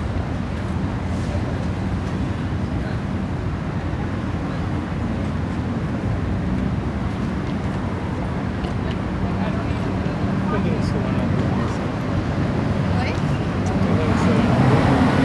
{
  "title": "Walk down 5th Avenue, New York, USA - Walk",
  "date": "2018-08-01 11:35:00",
  "description": "Walk south down 5th Avenue from near Central Park on a busy morning.\nMixPre 3 with 2 x Beyer Lavaliers in a small rucksack on my back. The mics are in each ventilated side pocket with home made wind screens. This gives more stereo separation as the sound sources get closer. I have to be careful not to cause noise by walking too fast. I think the bells are St. Patricks Cathedral.",
  "latitude": "40.76",
  "longitude": "-73.97",
  "altitude": "31",
  "timezone": "America/New_York"
}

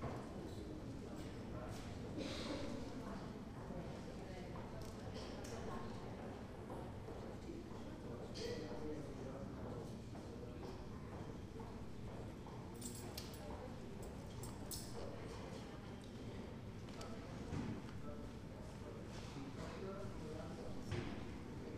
{
  "title": "Stare Miasto, Breslau, Polen - waiting hall",
  "date": "2013-08-05 15:50:00",
  "description": "The waiting area in a bank; notice the wonderful swing of the huge antique doors.",
  "latitude": "51.11",
  "longitude": "17.04",
  "altitude": "122",
  "timezone": "Europe/Warsaw"
}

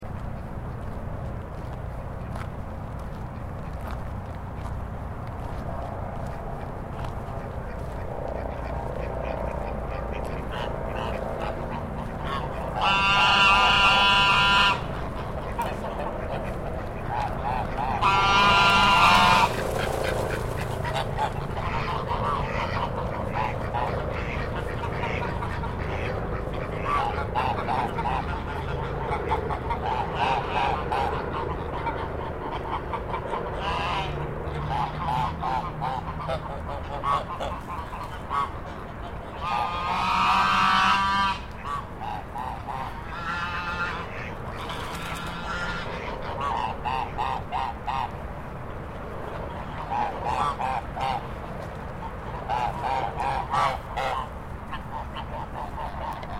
{
  "title": "Cambridgeport, Cambridge, MA, USA - white geese",
  "date": "2012-05-21 20:45:00",
  "description": "Visiting the Boston white geese at night.",
  "latitude": "42.35",
  "longitude": "-71.11",
  "altitude": "10",
  "timezone": "America/New_York"
}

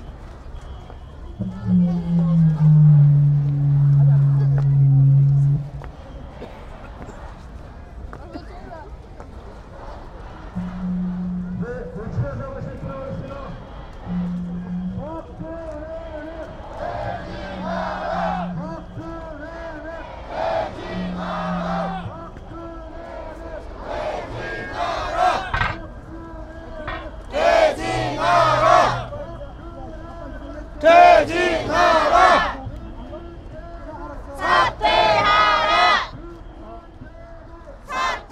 {
  "title": "Protest at Chuncheon-si, South Korea - anti-corruption protest",
  "date": "2016-11-19 20:00:00",
  "description": "One week after 500,000 - 750,000 people protested in Seoul several thousand (?) people marched to protest corruption and mis-use of power allegations against Korean president Park Geun-hye. Sonically the huge protests in Seoul were dominated by broadcasts from large sound systems and a good sound recording of the crowd was not possible. Here, in this regional city, the voices of the crowd chanting and calling out could be well heard, and made for a powerful representation of real popular sentiment. In this recording at least two protest chants can be heard.",
  "latitude": "37.85",
  "longitude": "127.75",
  "altitude": "95",
  "timezone": "Asia/Seoul"
}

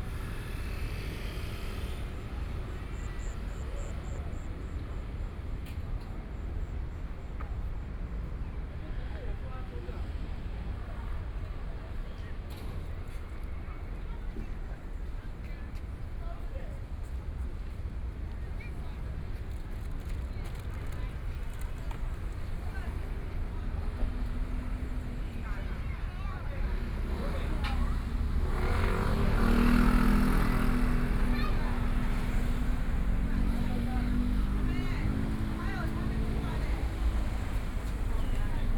{
  "title": "中山區永安里, Taipei city - soundwalk",
  "date": "2014-04-12 18:57:00",
  "description": "Walking on the street, In the restaurant's sound, Traffic Sound\nPlease turn up the volume a little. Binaural recordings, Sony PCM D100+ Soundman OKM II",
  "latitude": "25.08",
  "longitude": "121.55",
  "altitude": "10",
  "timezone": "Asia/Taipei"
}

